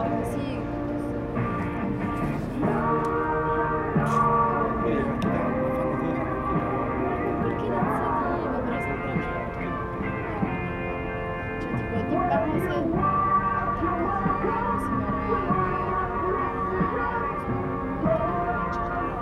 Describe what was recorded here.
Pink Floyd played back by a posh cafe' by the sea.